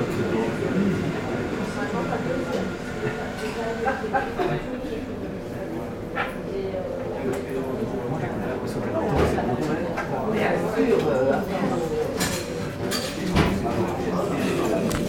In the police school, recording of a coffee time in a cafeteria.